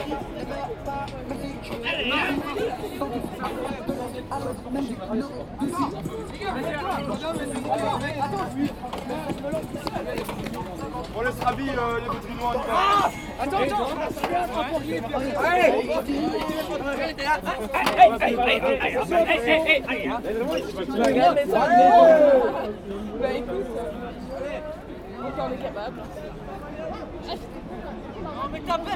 On the wharf of the Sambre river, there's no easy access for policemen. They can't come with the car, so junkies come here. I took risks to make this recording, as I went really inside the groups. They drink very too much beer, smoke ganja, listen intellectual quarter-world music, shit and piss on the ground, fight... and ... sing ? sing ? OK sorry, rather bawl they put their bollocks in my tears (truthful). Oh my god...